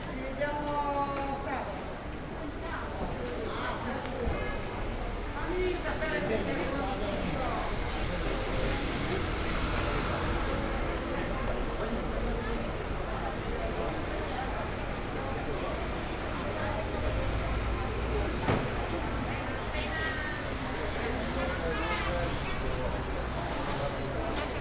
Ascolto il tuo cuore, città. I listen to your heart, city. Several chapters **SCROLL DOWN FOR ALL RECORDINGS** - Saturday market without plastic waste in the time of COVID19, Soundwalk
"Saturday market without plastic waste in the time of COVID19", Soundwalk
Chapter XCII of Ascolto il tuo cuore, città. I listen to your heart, city
Saturday, May 30th 2020. Walking to Corso Vittorio Emanuele II and in outdoor market of Piazza Madama Cristina, eighty-one days after (but day twenty-seven of Phase II and day fourteen of Phase IIB and day eight of Phase IIC) of emergency disposition due to the epidemic of COVID19.
Start at 2:52 p.m. end at 3:19 p.m. duration of recording 27'05''
The entire path is associated with a synchronized GPS track recorded in the (kml, gpx, kmz) files downloadable here:
30 May 2020, 14:52